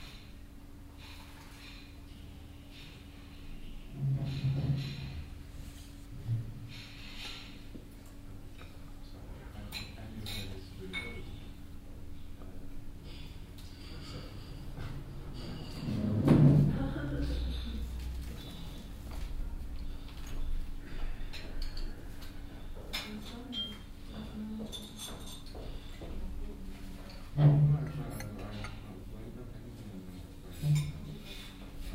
osnabrück, hotel westermann, fruestuecksraum
project: social ambiences/ listen to the people - in & outdoor nearfield recordings
hotel westermann, koksche strasse